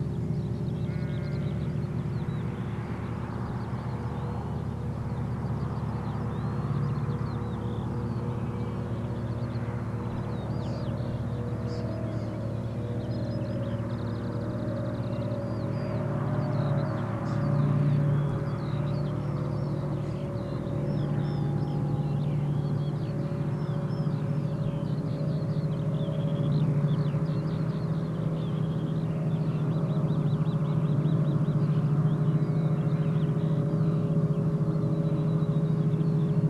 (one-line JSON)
{"title": "Pause Cellos", "date": "2011-06-02 12:00:00", "description": "A circle of 24 wood and steel cello bodies and drums with extra long necks. each has one string.Distant cattle grid and Cumbrian sheep.", "latitude": "54.16", "longitude": "-3.10", "altitude": "132", "timezone": "Europe/London"}